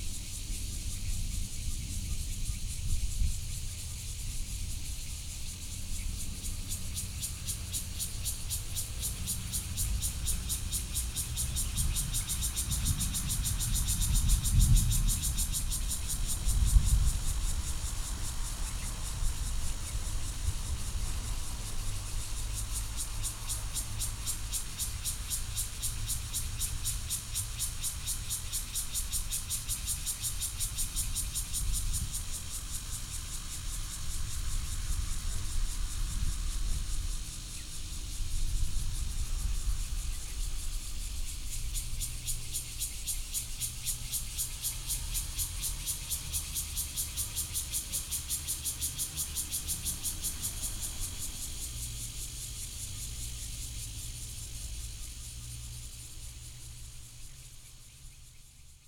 Fugang, Yangmei City, Taoyuan County - Cicadas
In a disused factory, Cicadas., Train traveling through, Distant thunder hit, Sony PCM D50 + Soundman OKM II